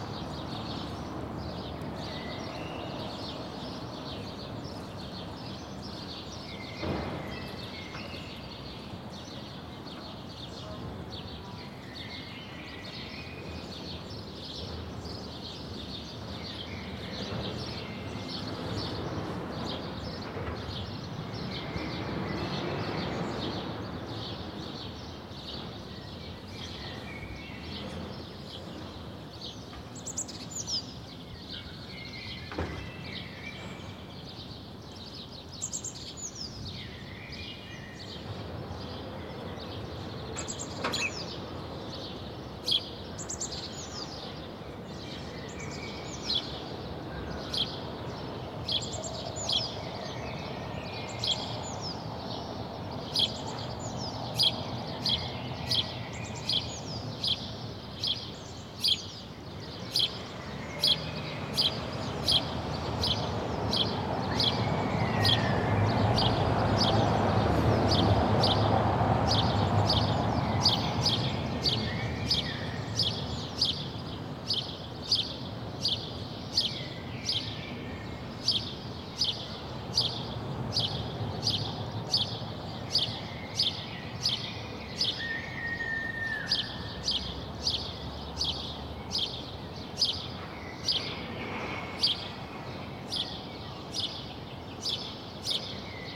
The noise of cars IS more striking these days. What a quiet Thursday morning on the balcony.
Sony PCM D-100